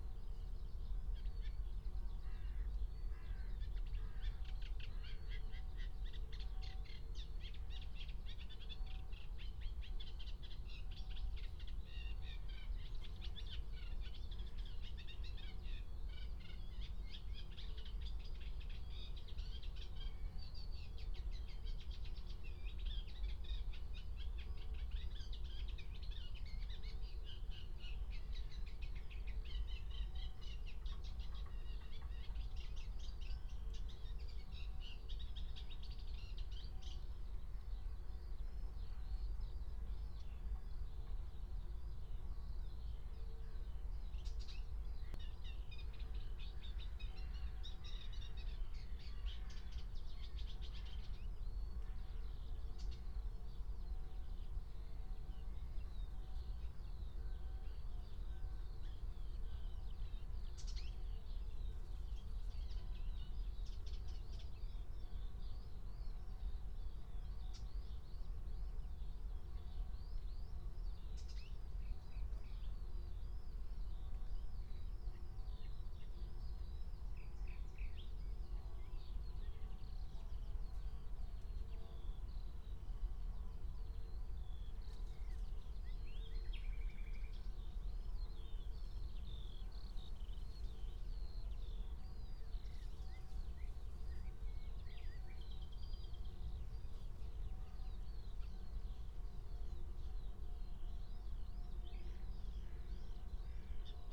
Berlin, Tempelhofer Feld - former shooting range, ambience
08:00 Berlin, Tempelhofer Feld
Deutschland, 2 June 2020